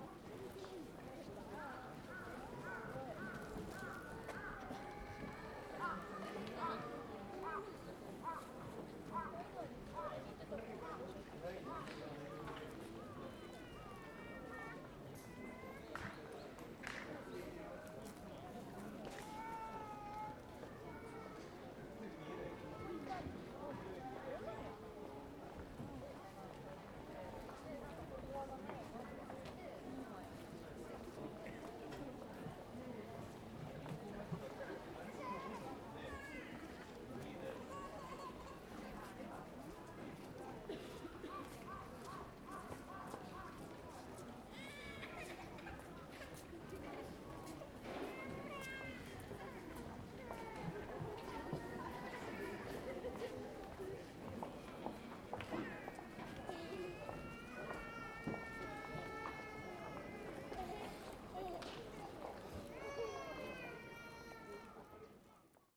Yoyogikamizonochō, Shibuya-ku, Tōkyō-to, Japonia - Meiji Jingu
Meiji Jingu during the last day of the year. Recorded with Zoom H2n